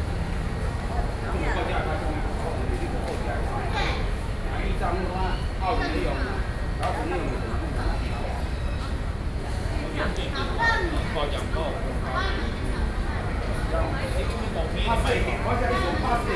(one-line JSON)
{"title": "Taipei, Taiwan - The people in the debate", "date": "2012-10-31 19:45:00", "latitude": "25.04", "longitude": "121.50", "altitude": "7", "timezone": "Asia/Taipei"}